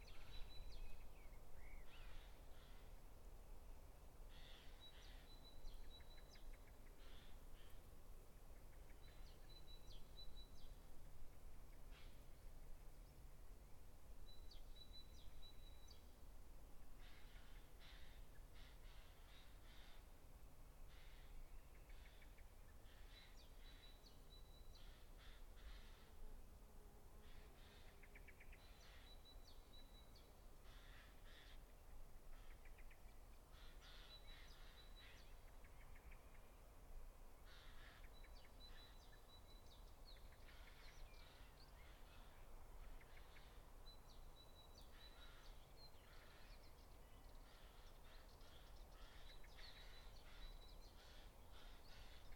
Nes Harim, Israel - Lazy spring noon time - sunny, wind comes and goes, some birds and insects active
Lazy spring noon time at a vineyard hidden between the mountains - its sunny and hot, wind comes and goes, some birds and insects are active. it seems like the world was resting for a moment. Recorded with Roland R-05.